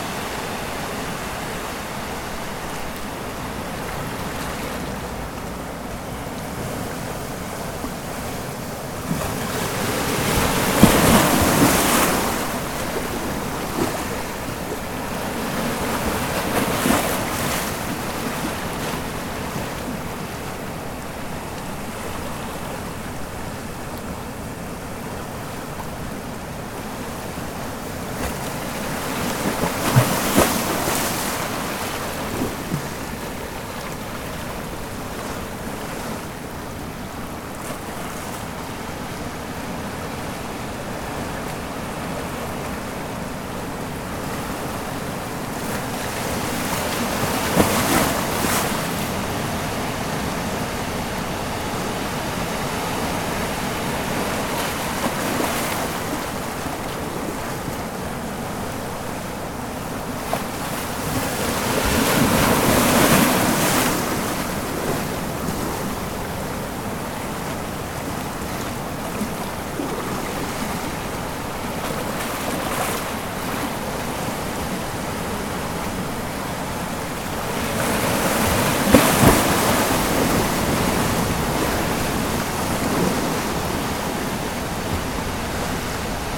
Pedras da Ponta Norte da praia da Lagoinha, Ubatuba - SP, 11680-000, Brasil - Praia da Lagoinha - Pedras da Ponta Norte
Recording performed on the stones of the northern tip of Lagoinha beach. Near the mouth of the river Lagoinha and the beginning of the trail to the beach of Bonete. A TASCAM DR 05 digital recorder was used. Cloudy day with high tide.
Ubatuba - SP, Brazil